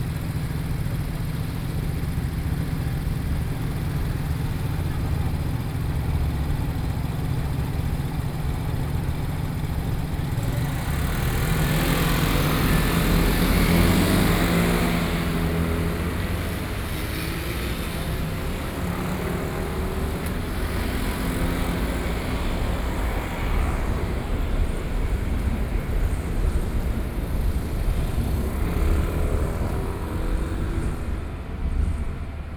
Street corner, Sony PCM D50 + Soundman OKM II
Taipei City, Taiwan, 30 July 2013